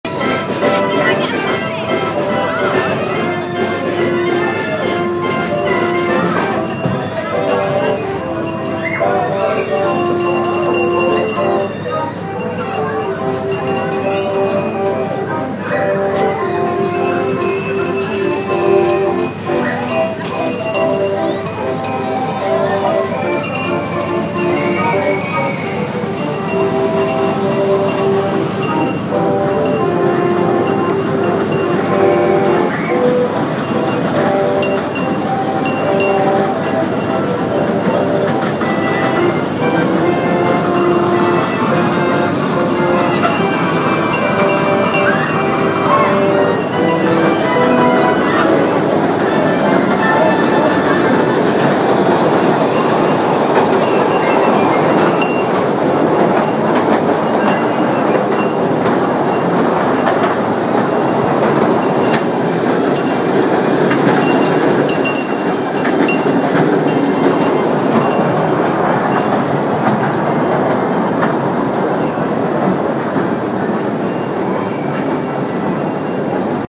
City Centre, Sheffield, South Yorkshire, UK - Halloween Carousel
Halloween party in Sheffield. Carousel scarier than ever.